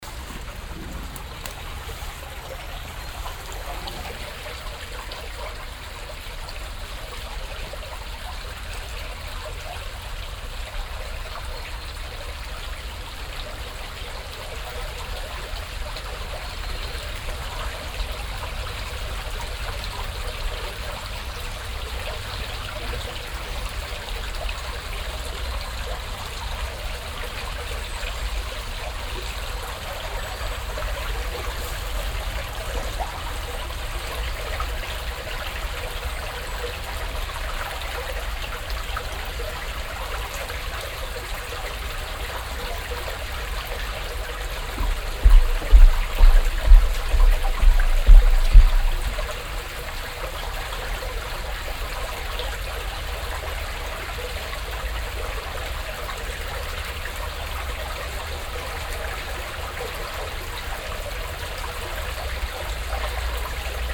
refrath, stadtpark, holzbrücke - refrath, stadtpark, unter holzbrücke
morgens an kleinem bach unter holzbrücke, das gluckern und plätschern des wassers ein fussgänger überquert die brücke
soundmap nrw - social ambiences - sound in public spaces - in & outdoor nearfield recordings